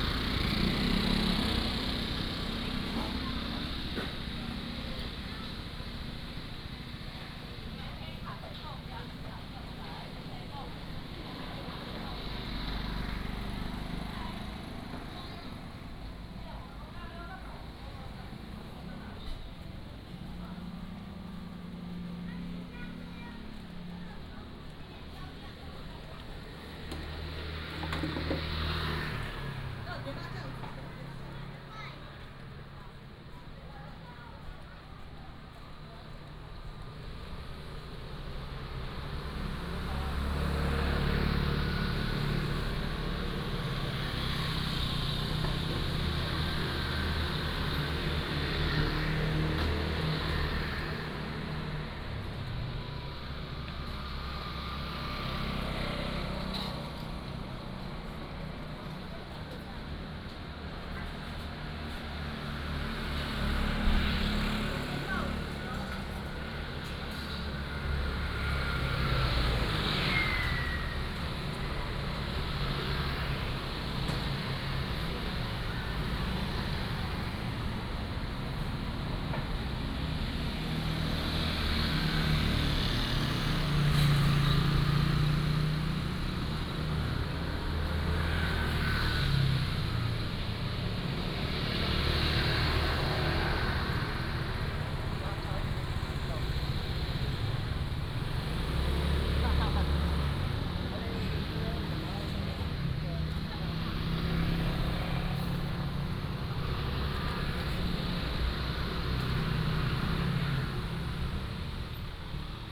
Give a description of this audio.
Walking on the road, Traffic Sound, Various shops